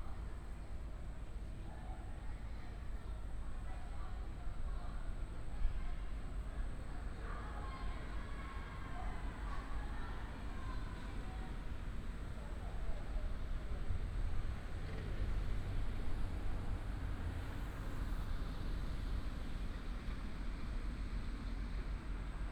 麗湖綠地公園, Neihu Dist., Taipei City - in the Park
in the Park, Traffic sound, sound coming from the school, bird sound